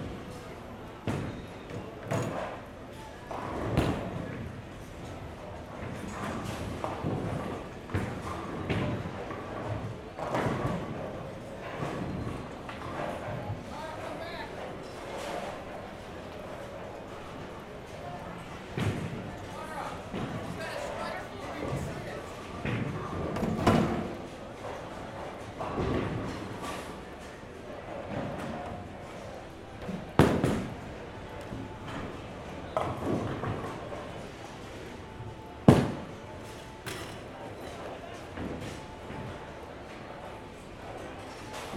Brookpark Rd, Cleveland, OH, USA - Rollhouse Parma
At the bowling alley with the recorder on the table behind the lane while playing a full game.
2 February 2022, 18:30